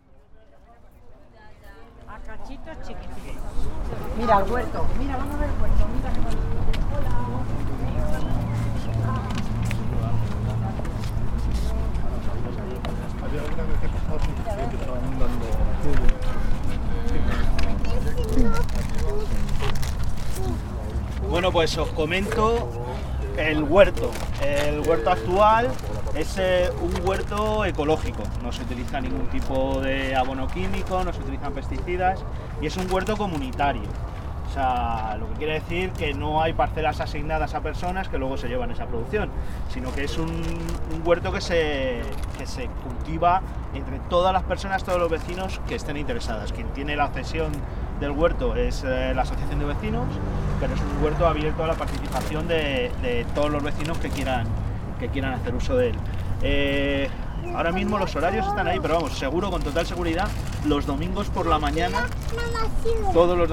Pacífico Puente Abierto - Transecto - Huerto Adelfas